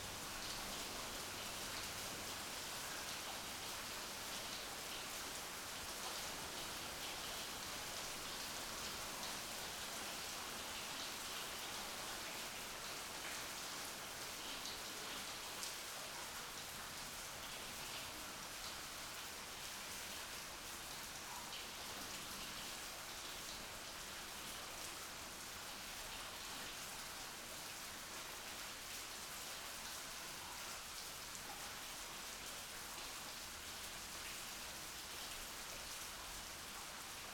Rijeka, Croatia - Building under construction